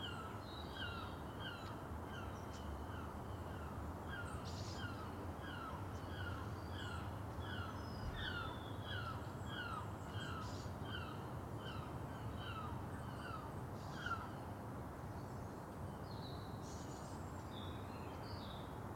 The Poplars
Stand in a corner
in front of a purple-doored garage
Two cars appear
to park along the alley
Herring gulls cry
England, United Kingdom, 17 January 2021